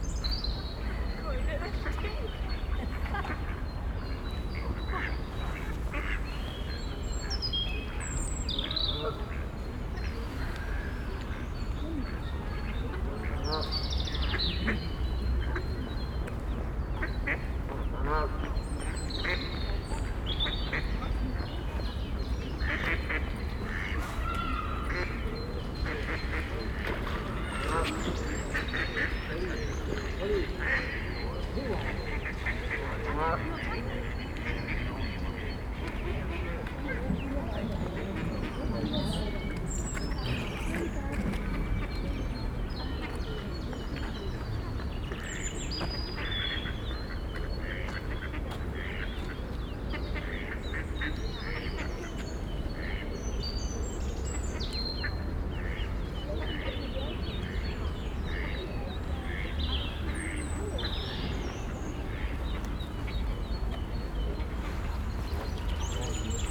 Borbeck - Mitte, Essen, Deutschland - essen, schloß borbeck, lake with ducks
Im Schloßpark Borbeck an einem Ententeich.
In the Park of Schloß Borbeck at a lake with ducks.
Projekt - Stadtklang//: Hörorte - topographic field recordings and social ambiences
Essen, Germany, 18 April 2014, 14:30